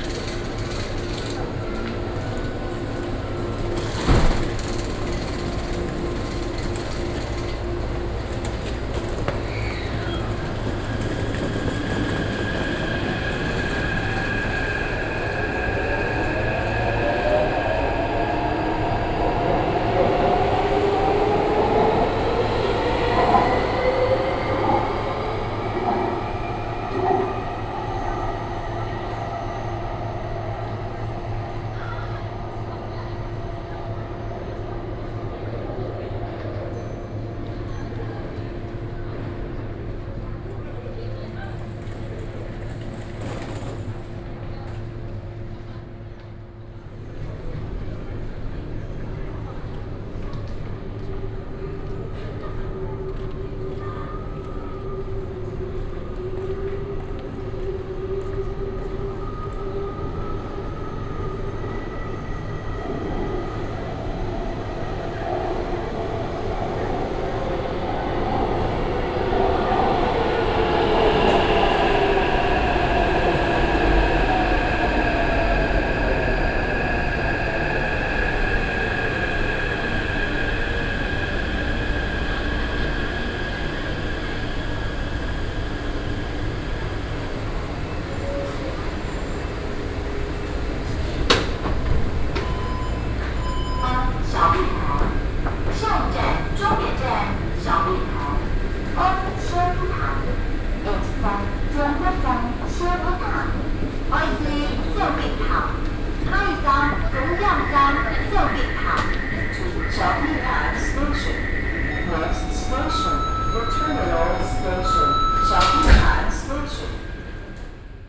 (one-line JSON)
{"title": "Qizhang Station, Xindian District, New Taipei City - Platform", "date": "2012-06-28 16:30:00", "description": "in the Qizhang Station Platform, Zoom H4n+ Soundman OKM II", "latitude": "24.98", "longitude": "121.54", "altitude": "27", "timezone": "Asia/Taipei"}